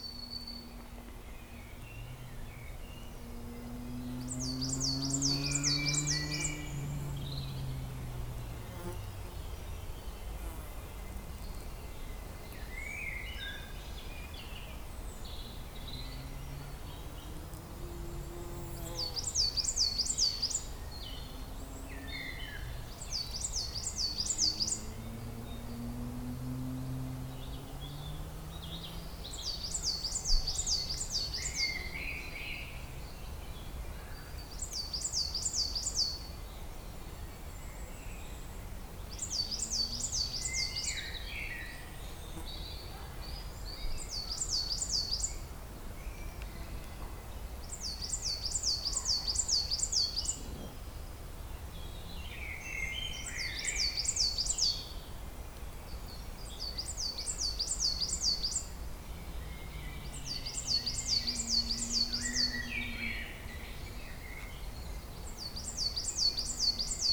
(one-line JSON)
{"title": "Quaix-en-Chartreuse, France - Quiet wood", "date": "2017-03-30 17:30:00", "description": "Very quiet ambiance in the woods, birds singing and a lot of soothing silence.", "latitude": "45.24", "longitude": "5.74", "altitude": "829", "timezone": "Europe/Paris"}